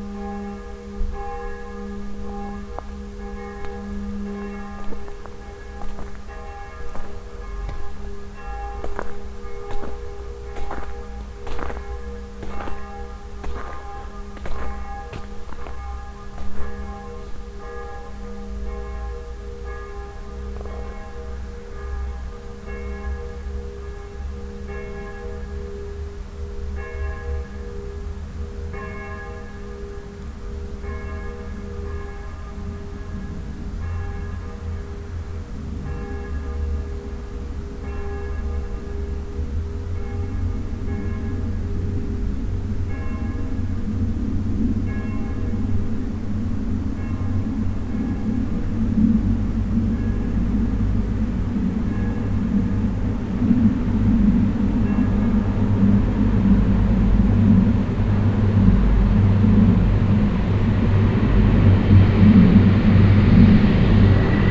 nettersheim, kirchglocken, bahn- und flugverkehr
nettersheim am abend, kirchglocken, schritte im verharschten schne, vorbeifahrt der bahn, flugverkehr
soundmap nrw
social ambiences/ listen to the people - in & outdoor nearfield recordings